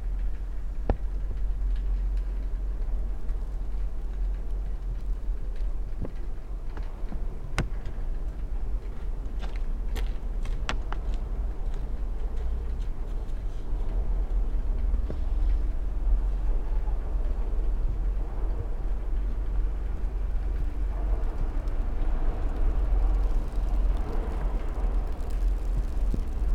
snowflakes, paper, cars sliding on nearby street, snowplough, passers by, steps ...
Slovenia, 16 January